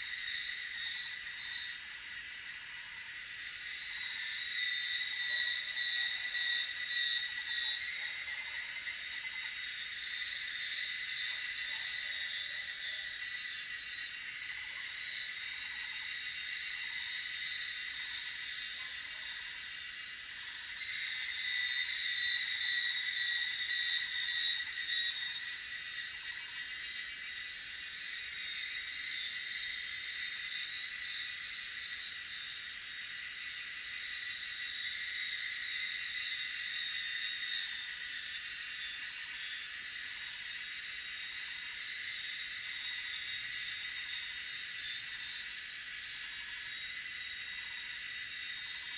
水上巷桃米里, Puli Township - Cicada sounds and Dogs barking
Cicada sounds, Bird sounds, Dogs barking, in the woods
Zoom H2n MS+XY
17 May, ~14:00